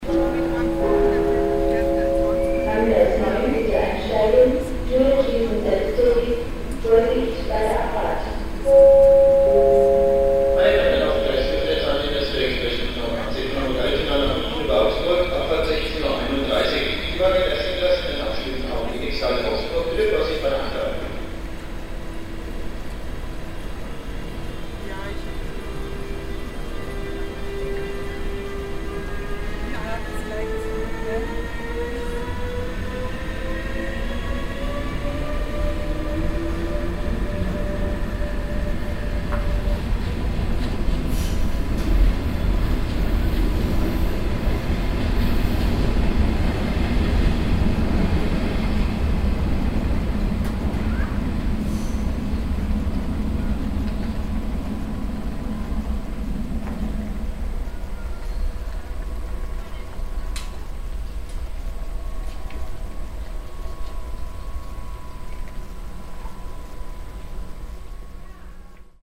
würzburg, main station, announcements - würzburg, hauptbahnhof, gleisansagen
gleisansagen am würzburger hauptbahnhof
project: social ambiences/ listen to the people - in & outdoor nearfield recordings
hier - bahnhof ambiencen
24 April